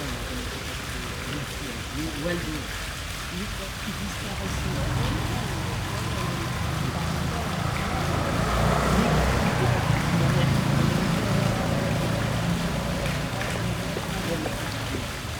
Morning atmosphere on what was forecast to be the hotest day in Paris so far in 2022. Extreme temperatures reaching 40C much earlier than usual. A small green square with fountain and people resting or chatting on bendhes. Pigeons call and cars pass.
18 June 2022, 09:31